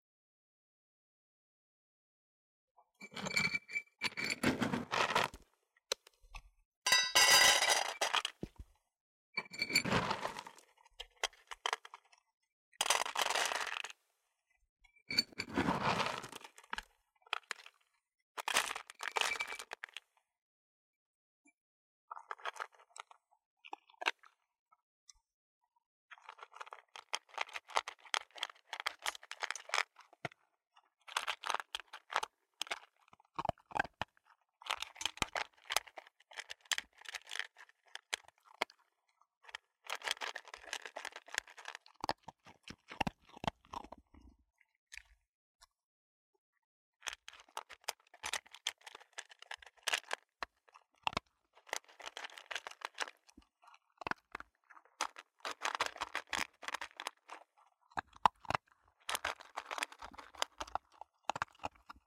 Morgans Run Ct NE, Buford, GA, USA - A dog eating dinner.
This is an audio recording of a two year old dog, a corgi named Otto, eating dinner. He is eating out of a metal bowl on hardwood flooring.
29 February 2020, 18:30